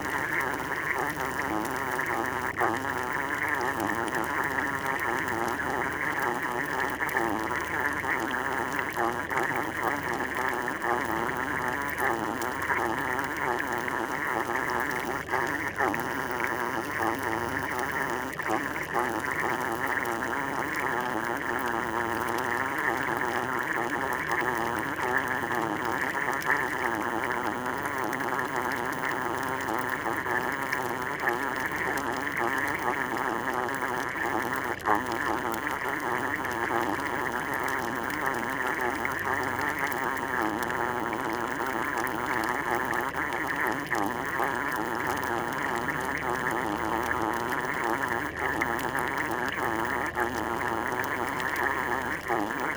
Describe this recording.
Recording of a small hole making bubbles on the beach ground, during low tide. A quite strange sound !